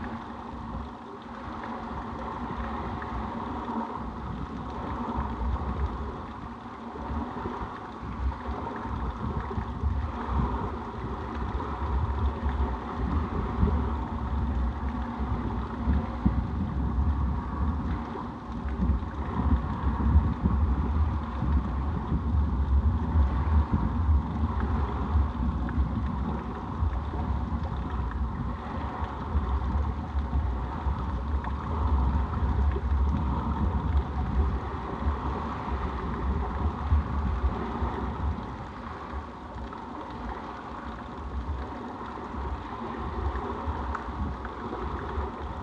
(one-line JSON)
{"title": "Rostrevor, UK - Contact Mics on Drainage Pipe to the Lough", "date": "2016-02-19 13:05:00", "description": "Recorded with a pair of JrF contact mics and a Marantz PMD 661", "latitude": "54.10", "longitude": "-6.19", "altitude": "4", "timezone": "Europe/London"}